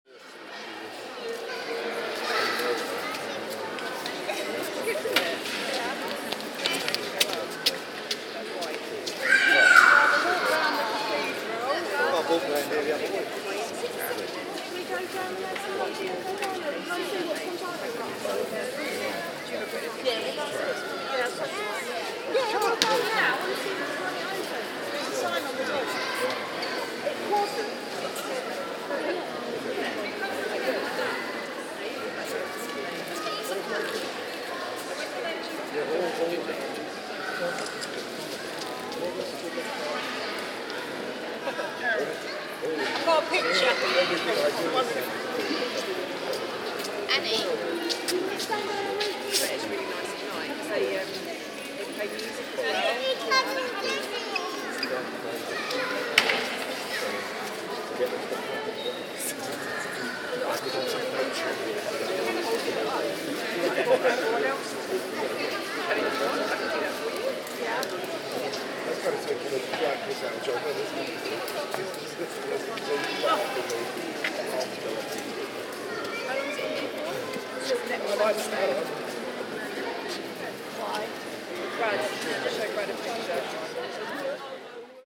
The interior of Rochester Cathedral during the exhibition of the Museum of the Moon, which involves an enormous scale-model of the Moon suspended in the middle of the space. Recorded in ambisonic B Format on a Twirling 720 Lite mic and Samsung S9 android smartphone
South East, England, United Kingdom